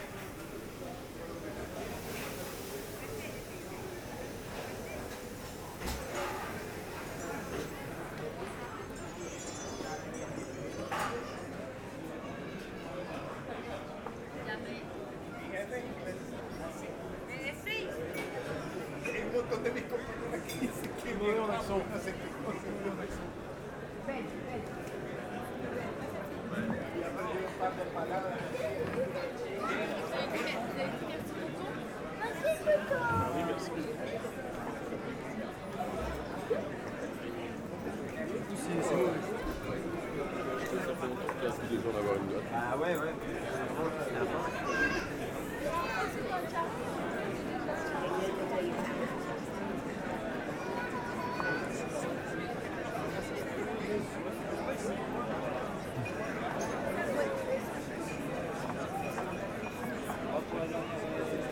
Tours, France - Place Plumereau atmosphere
Very noisy ambiance of the place Plumereau, where bars are completely full everywhere. Happy people, happy students, local concert and noisy festive ambience on a saturday evening.